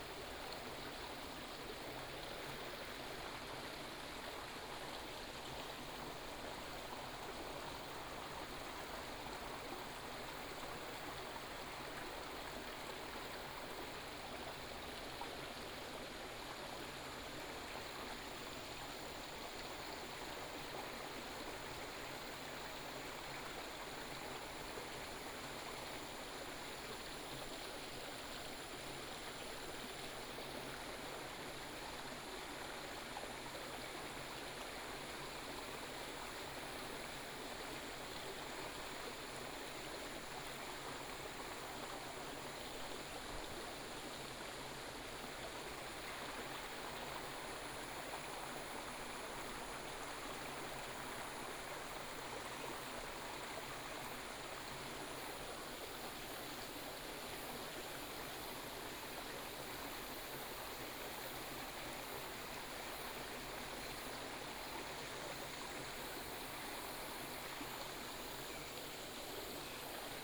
on an ancient bridge, stream, Binaural recordings, Sony PCM D100+ Soundman OKM II
12 September, 13:15